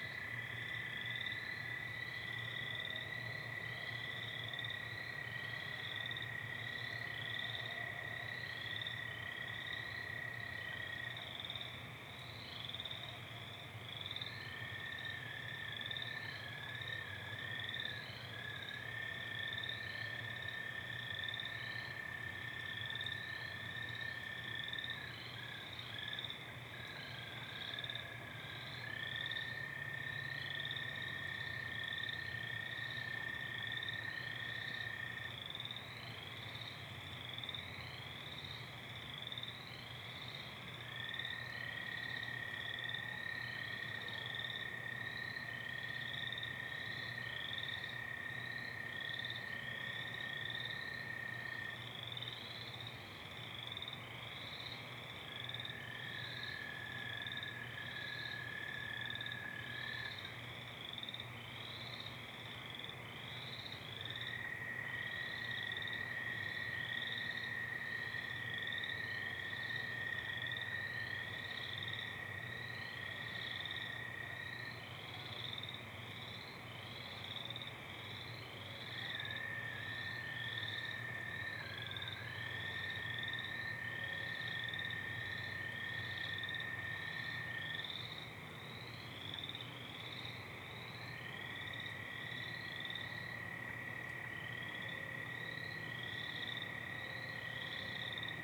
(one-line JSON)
{"title": "Waters Edge - Swamp Sounds", "date": "2022-05-13 21:30:00", "description": "After a few days of rain the swamp has become rather noisy. There's also some other neighborhood sounds like barking dogs, passing traffic, and maybe some neighbors talking in the distance", "latitude": "45.18", "longitude": "-93.00", "altitude": "278", "timezone": "America/Chicago"}